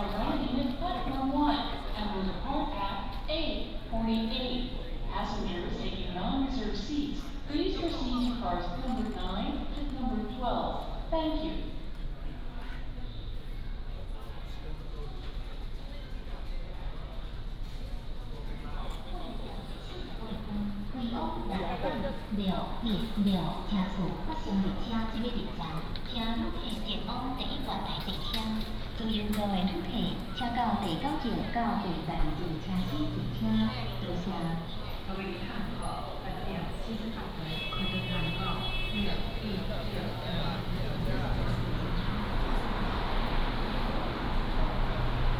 THSR Tainan Station, Guiren District - Walking through the station
From the station platform, To the hall, Go to the station exit
Tainan City, Taiwan, February 2017